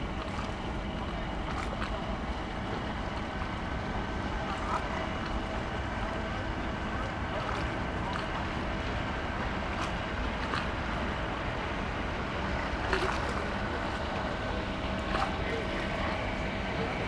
berlin, grünau: dahme river - the city, the country & me: river bank
dahme river bank, lapping waves
the city, the country & me: october 3, 2015
Berlin, Germany, 3 October 2015